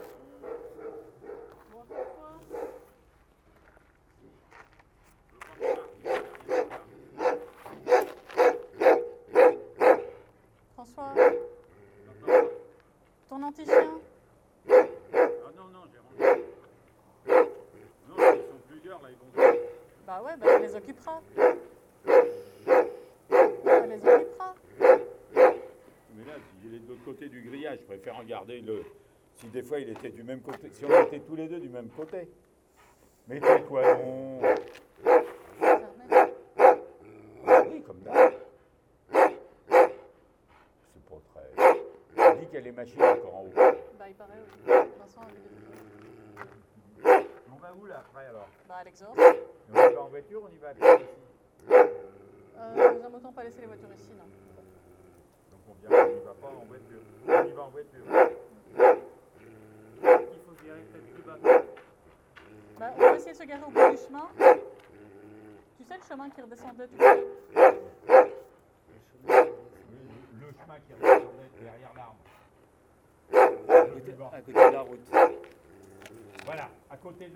Largentière, France - Stupid dogs
Since years, there's dogs on this place. Since years, they don't recognize me and it's always the same, they yell ! This moment is a pure well-being ;-)
25 April 2016, 14:30